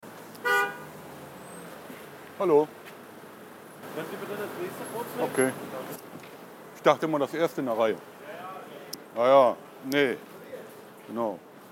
Flughafen Berlin-Tegel, Flughafen Tegel, Berlin, Deutschland - Jaja…, nee…, genau
Wenn der Taxifahrer lieber quatschen möchte, darf man auch mal das zweite in der Reihe nehmen… / If the taxi drivers prefer to chat, you may also take the second in the row...